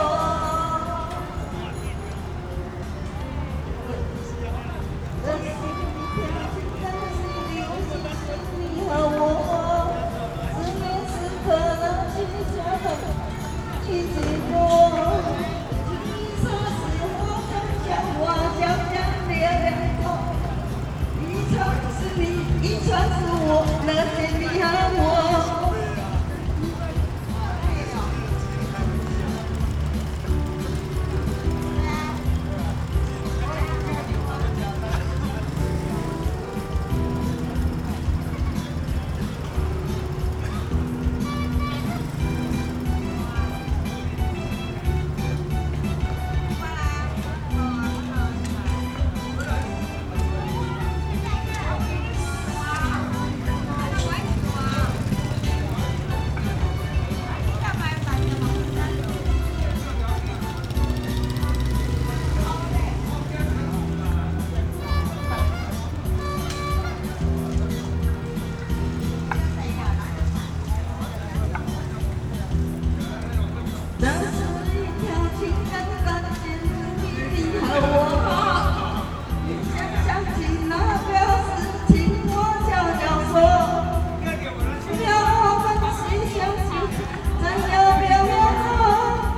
Erchong Floodway, Sanzhong District, New Taipei City - singing
Square in front of the temple, a group of people are singing, Rode NT4+Zoom H4n
Sanzhong District, New Taipei City, Taiwan, 12 February 2012